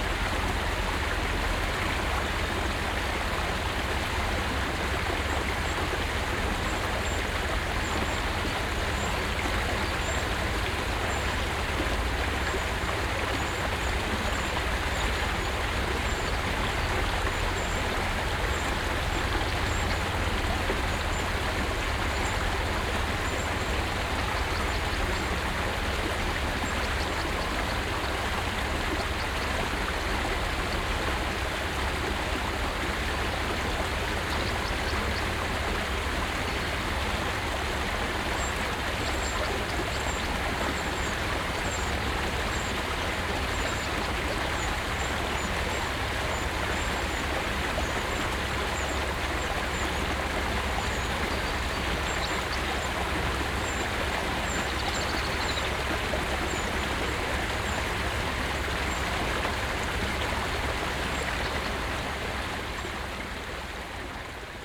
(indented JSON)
{
  "title": "Winkhauser Tal, Deutschland - essen, winkhauser tal, small stream at bridge",
  "date": "2014-05-14 09:20:00",
  "description": "Auf einer Brücke über einen kleinen Bach im Naturschutzgebiet Winkhauser Tal. Der Klang des Wassers und der Vögel an einem sonnigem, leicht windigem Fühlingsmorgen.\nAt a bridge across a small stream at the nature protection zone winkhauser valley. The sound of the water and the birds at a mild windy, sunny spring morning.\nProjekt - Stadtklang//: Hörorte - topographic field recordings and social ambiences",
  "latitude": "51.45",
  "longitude": "6.94",
  "altitude": "75",
  "timezone": "Europe/Berlin"
}